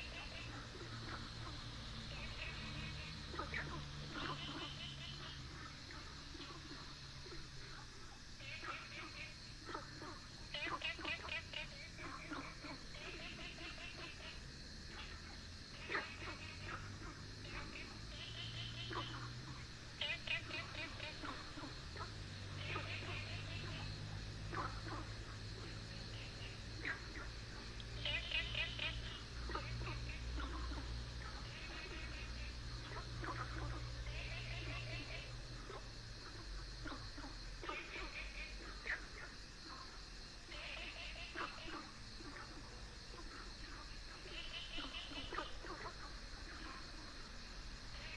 Frogs sound
Binaural recordings
Sony PCM D100+ Soundman OKM II
Puli Township, 桃米巷29-6號, 29 April 2015